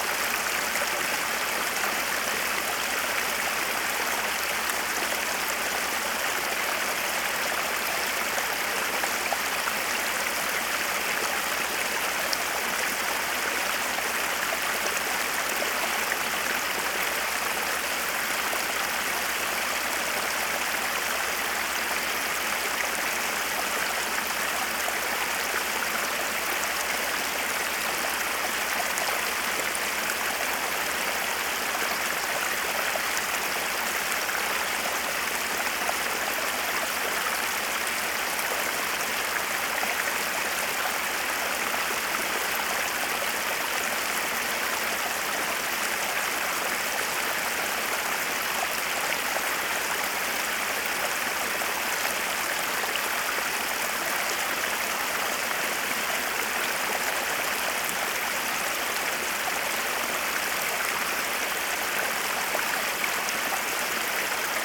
Waterfall flowing down the Winter mountains, White Sea, Russia - Waterfall flowing down the Winter mountains.
Waterfall flowing down the Winter mountains.
Водопад стекающий с Зимних гор.